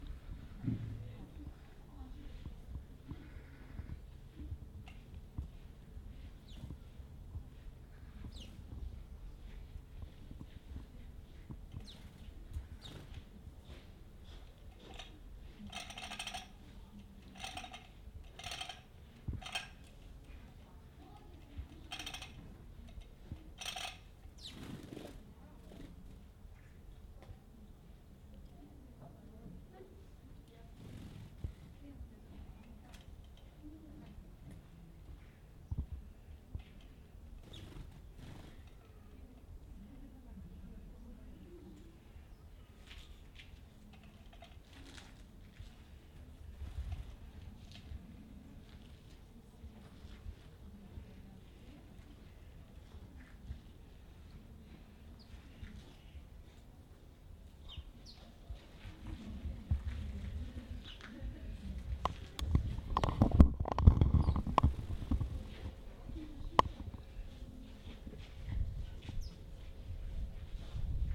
Bastion, Helsinki, Финляндия - 2 sparrows on feeder, a dove and a titmouse
feeder on the bastion wall tea ceremony